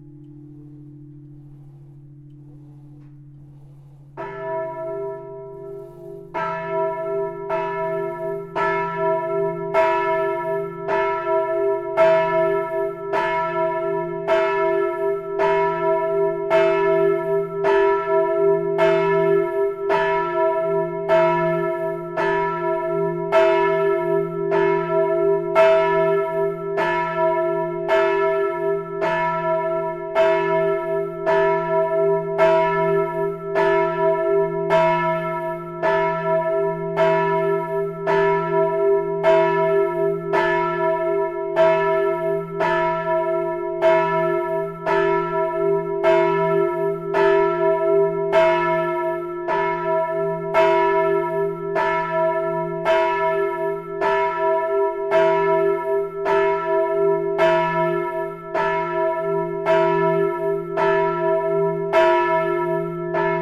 The Saint-Hubert bells, ringed at 12. In first the Angelus, after the midday bell ringed. It's the only place I know where Angelus is ringed on three different bells, it's completely astonishing.
After, the time of the day.
11 October, 12:00